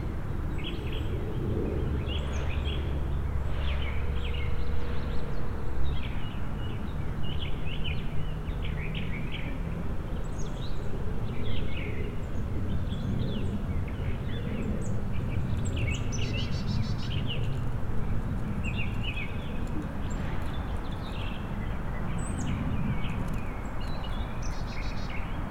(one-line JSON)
{"title": "Alpharetta Hwy, Roswell, GA, USA - Birds & Traffic In Roswell Professional Park", "date": "2021-03-06 15:36:00", "description": "Birdsong in the middle of a small business park. I was present in this location to drop off vintage audio equipment to a repair shop, and these are the sounds that could be heard just a few shops down. Traffic sounds can be heard from the nearby Alpharetta Highway, and an HVAC fan can be heard to the right. Other sounds can be heard from the surrounding buildings. EQ was done in post to reduce rumble.\n[Tascam DR-100mkiii & Roland CS-10EM binaural earbuds w/ foam covers & fur]", "latitude": "34.05", "longitude": "-84.33", "altitude": "332", "timezone": "America/New_York"}